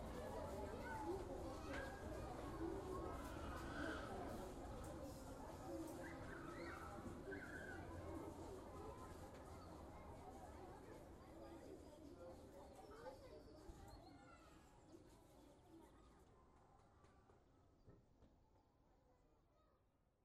Court-St.-Étienne, Belgique - Collège Saint-Etienne schoolyard
Recording of the Collège Saint-Etienne schoolyard on a sunny morning. Initially there's near nothing, just some brief and tenuous rumors. Then, the ringtone is vibrating, the first child arrives in the courtyard. A diffuse sound is gradually increasing, a long time until the last voice.